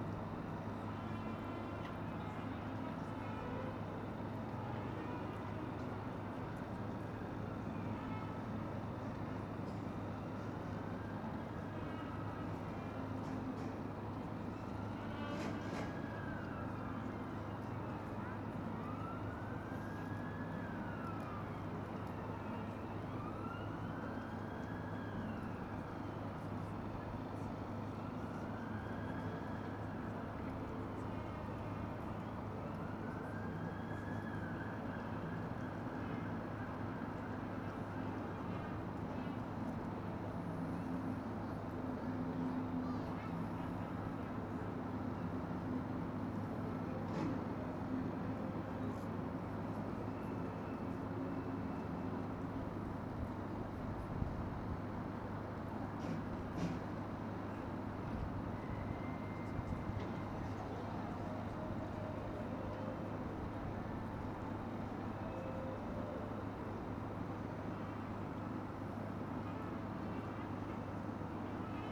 Baltic Square, Gateshead, UK - Sunday morning by Gateshead Millennium Bridge
Recorded on a handheld Tascan DR-05 stood next to the Gateshead Millenium Bridge. Noise of busker and Sunday market can be heard from the Newcastle side of the River Tyne.
North East England, England, United Kingdom, 13 November 2016, ~11:00